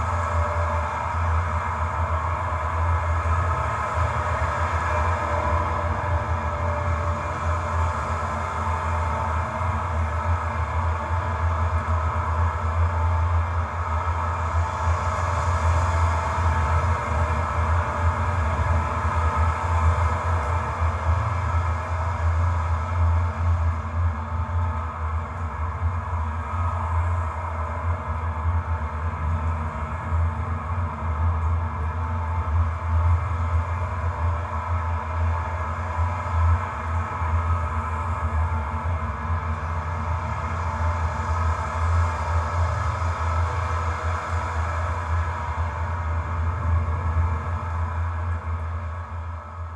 metal railing in Tampere Finland 2